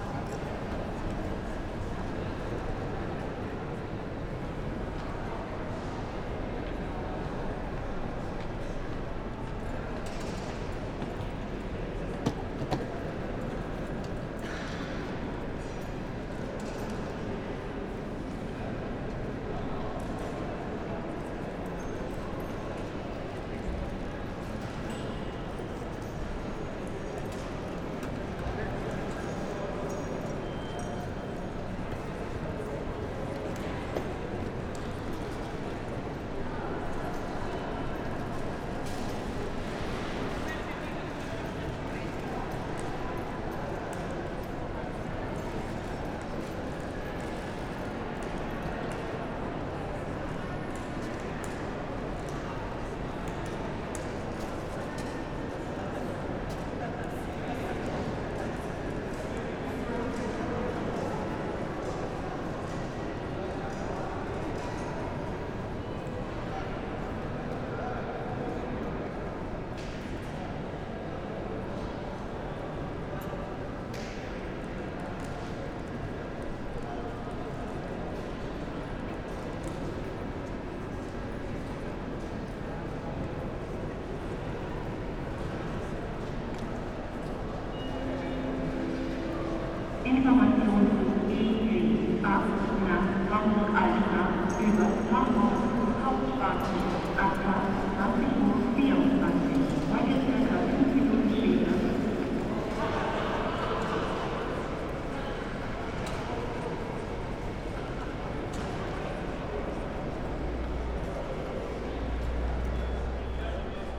Hbf Bremen - main station hall ambience
Bremen Hauptbahnhof, main station, holiday evening ambience at the entry hall
(Sony PCM D50, Primo EM172)